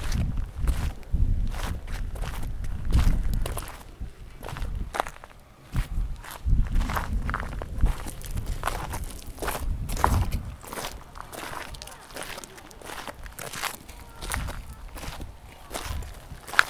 Åre, Sverige - Åreskutan top
Walking downhill on a stony trail. I think we´re on the north side of the mountain top. Going down. Windy. Walking with the clouds. Many people going up and down here, all the time. Even in winter, but then with skis. Good for the balance. Landscape is vast. Norway must be there in the far. A border somewhere. We´re going down. It was a nice tour. Now listening back to a part of that climbing down. I feel the texture of the trail, stones and a little bit muddy here and there. It is many different flowers up here. No fields of flower, but they find their spots. And lichens on the stones and moss in between them. Snow too, in the middle of summer. It´s warm when the wind is not blowing. We´re not far from the gondola lift now. We made it before they close.